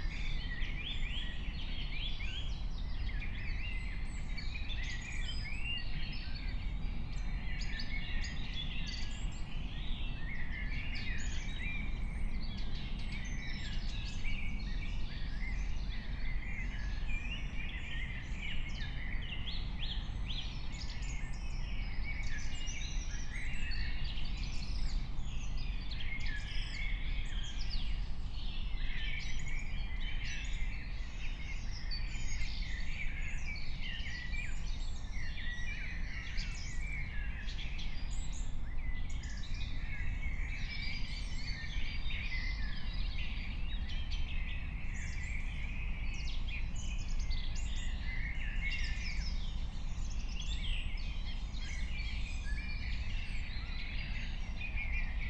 05:15 Berlin, Königsheide, Teich - pond ambience